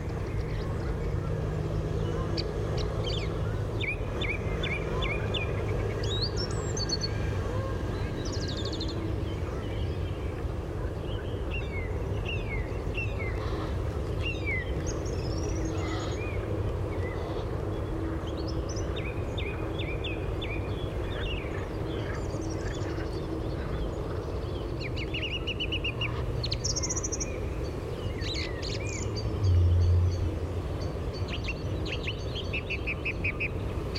Broekkade, Schiedam, Netherlands - Trains, frogs, birds
Recorded with Dodotronic parabolic dish.